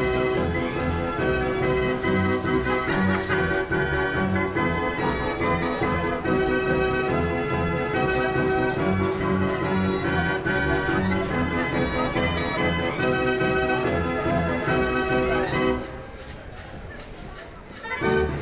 Den Haag, Nederlands - Den Haag center
A long walk into the center of Den Haag, during a busy and enjoyable Saturday afternoon. In first, the very quiet Oude Molsstraat, after, Grote Halstraat with tramways, the reverb in the « Passage » tunnel, the very commercial Grote Marktstraat. Into this street, I go down in the underground tramways station called Den Haag, Spui, near to be a metro station. A very intensive succession of tramway passages. Escalator doing some big noises, and going back outside. Into the Wagenstraat, some street musicians acting a automatic harmonium. Den Haag is a dynamic and very pleasant city.
Den Haag, Netherlands, 30 March 2019, 13:00